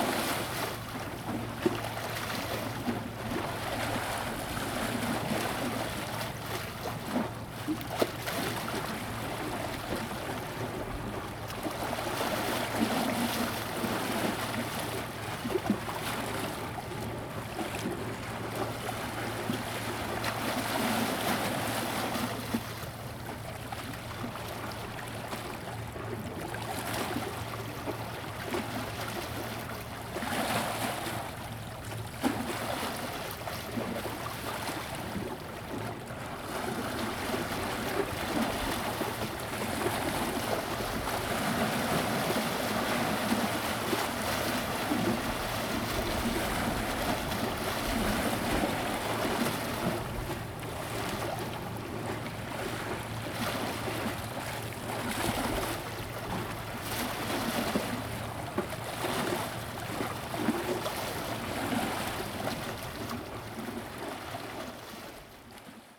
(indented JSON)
{
  "title": "淡水區文化里, New Taipei City - tide",
  "date": "2016-03-02 12:23:00",
  "description": "The river, tide\nZoom H2n MS+XY",
  "latitude": "25.17",
  "longitude": "121.43",
  "timezone": "Asia/Taipei"
}